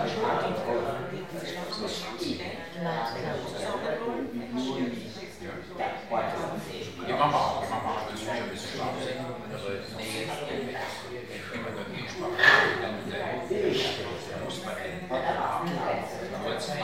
budapest, cafe miro, indoor atmo
indoor atmo in another famous hungarian cafe -steps, loud speeches and sounds from the kitchen
international city scapes and social ambiences